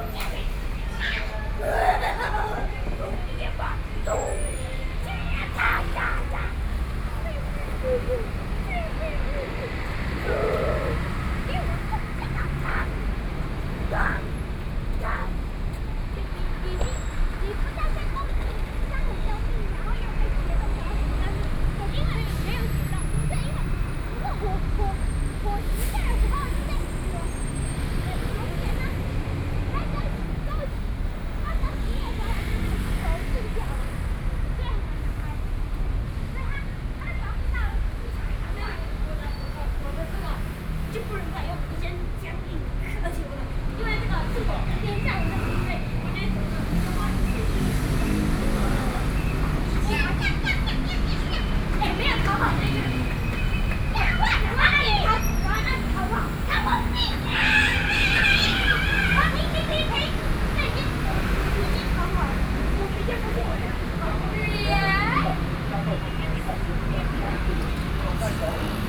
Zhongzheng Rd., Shilin - soundwalk
Traffic Noise, Walking in the street, Children frolic sound, Binaural recordings, Sony PCM D50 + Soundman OKM II
Shilin District, Taipei City, Taiwan, 22 October 2013